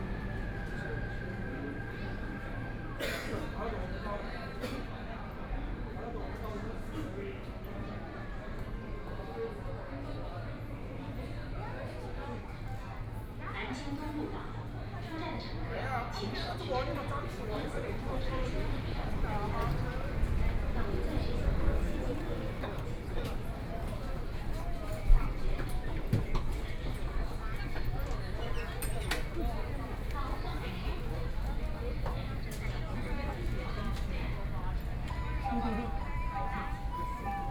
walking in the station, Binaural recording, Zoom H6+ Soundman OKM II
Shanghai, China, 23 November 2013, ~19:00